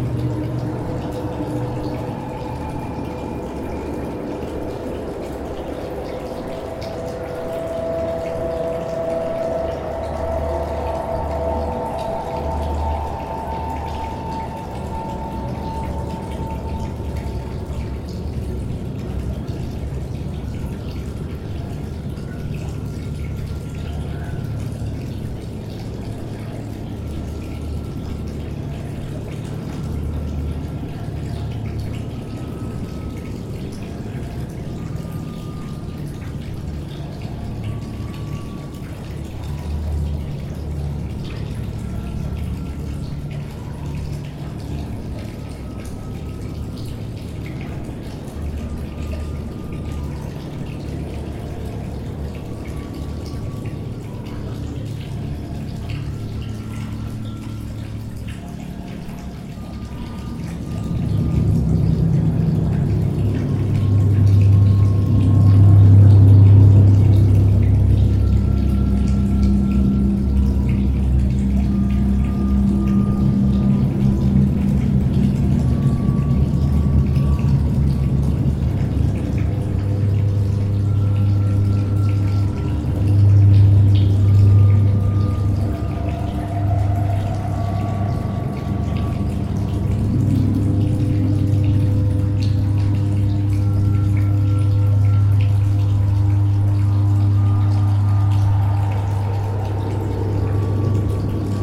A Call from Underworld, Bubeneč
A Call from Underworld
Michal Kindernay
post date: 2009-09-02 20:58:03
rec.date: 2009-08-24
tags: underground, water
category: music, interiors, outskirts
The recording from sedimentation tanks in Old Sewage Cleaning Station. This time from one evening of Summer Workshops event. Students and artists met each other during several variously focused workshops creating collaborative installations in the space of Cistirna. This recording was captured during the concert of Slovak friends. They wanted to work with the space acoustics but they rather brought real hell into to silent underground spaces. The recording made in one of the side tunnels, far away from the source of the intrusive sound.
24 August 2009, 09:39